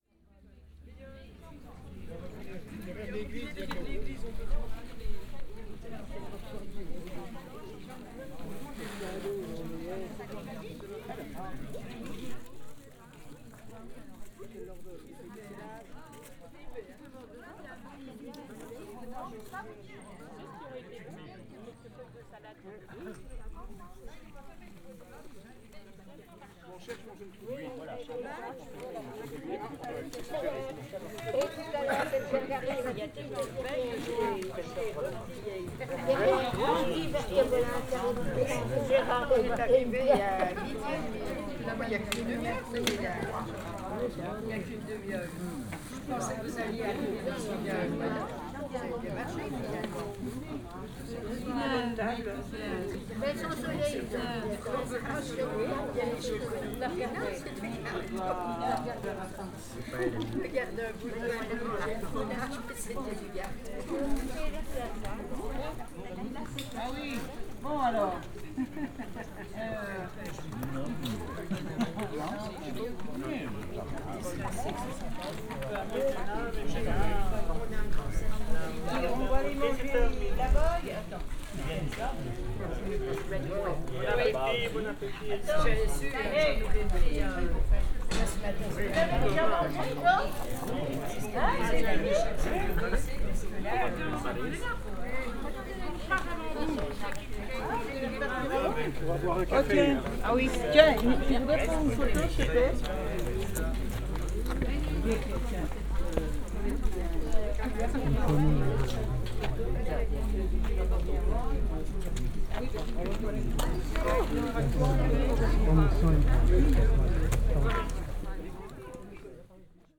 Volastra - resting in front of a church
a large group of French tourists resting and having their lunch in front of a church in Volastra village.